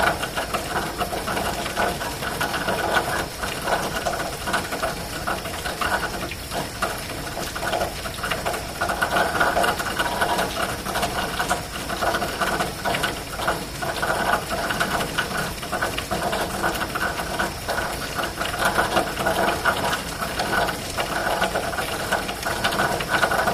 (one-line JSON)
{"title": "Crescent Hill, Louisville, KY, USA - Zen Rain (2:31am)", "date": "2013-09-23 02:31:00", "description": "Rain falling in an elbow of a downspout with cicadas.\nRecorded on a Zoom H4n.", "latitude": "38.26", "longitude": "-85.69", "altitude": "166", "timezone": "America/Kentucky/Louisville"}